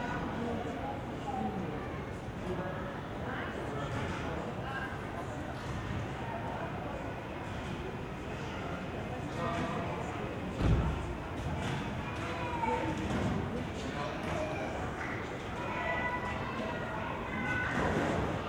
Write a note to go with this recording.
High-Deck, multi cultural residential area from the 70/80s, pedestrian areas are above street levels, ambience on a late summer evening. (SD702, Audio Technica BP4025)